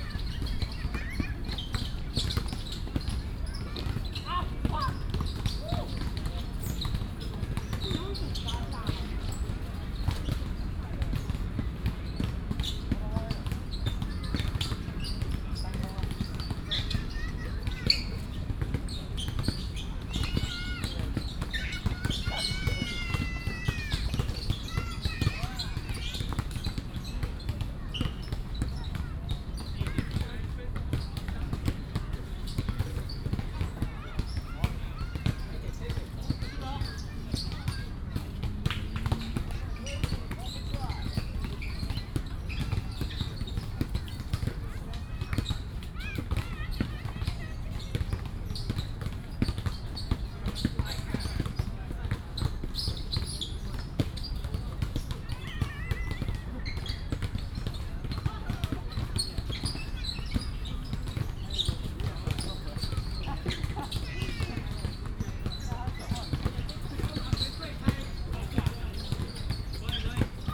大安森林公園, 大安區 Taipei City - Next to the basketball court
Next to the basketball court, in the park